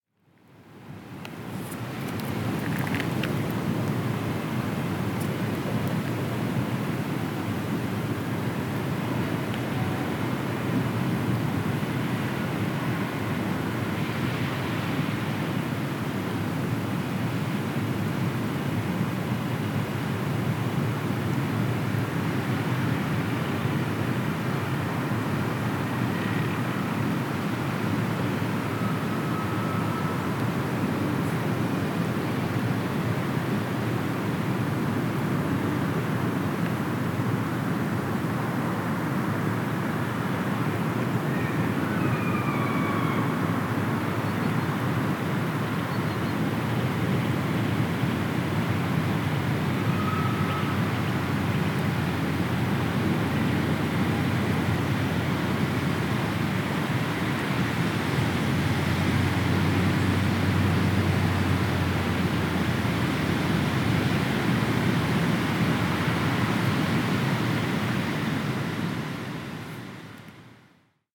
Neringos sav., Lithuania - Field Near the Art Colony
Recordist: Aleksandar Baldazarski
Description: In the field near the Nida Art Colony. Subtle bird sounds in the distance, cars in the background and wind noises. Recorded with ZOOM H2N Handy Recorder.
4 August, 17:44